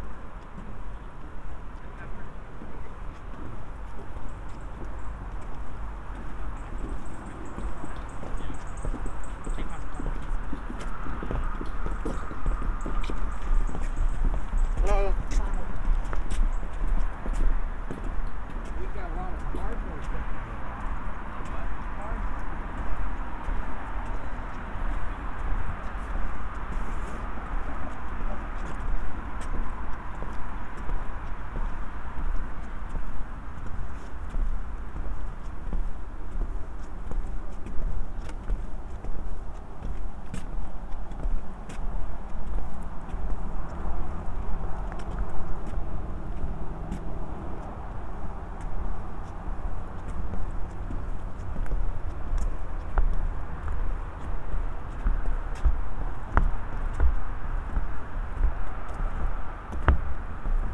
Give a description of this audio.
Crossing over the wooden boardwalk at the Heritage Park Trail. Footsteps on wooden planks can be heard throughout. The zipper on my sweatshirt jangled during the walk and got picked up on the recording. A few breaths can also be heard, but I did what I could to keep myself out of the recording. [Tascam Dr-100mkiii w/ Roland CS-10EM binaural microphones/earbuds]